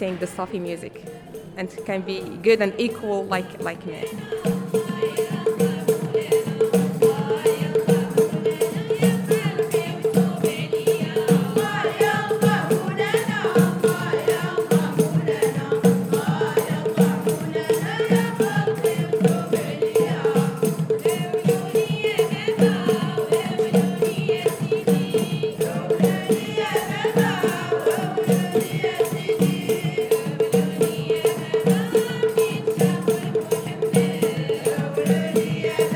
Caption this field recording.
In Dar Bellarj Fondation, a group of women sing a sufi song during the 5th Marrakesh Biennale. One of them, Maria, talk about the project.